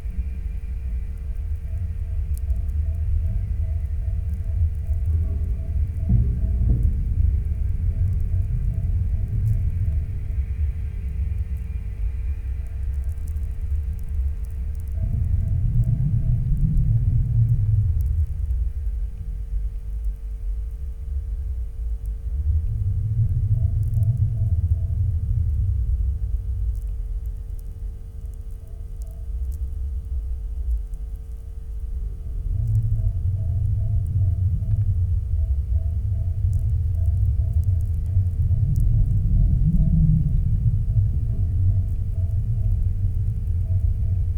contact microphones on the barded wire guarding some warm water pipes